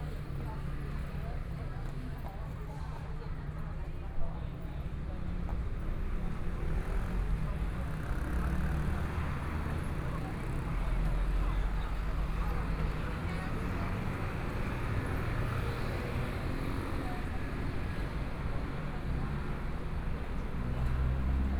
Nanjing E. Rd., Zhongshan Dist. - on the Road
Walking on the road, Various shops voices, （Nanjing E. Rd., Zhongshan Dist.）from Songjiang Rd.to Jianguo N. Rd., Traffic Sound, Binaural recordings, Zoom H4n + Soundman OKM II